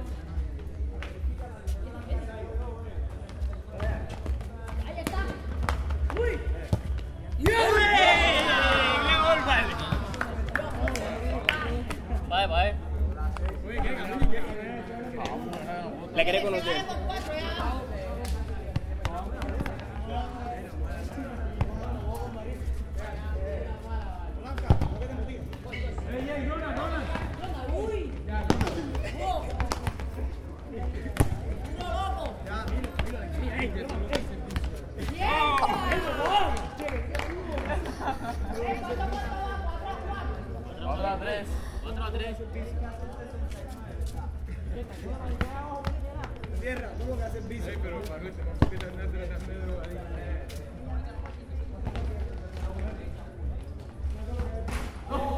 Cartagena, Barrio Getsemaní, Paisaje Sonoro Partido de Fútbol
El popular y tradicional picadito de fútbol, un partido con jugadores y canchas improvisadas, en calles, plazas, parques o potreros. Aquí una calurosa noche de enero. 10 pm en Cartagena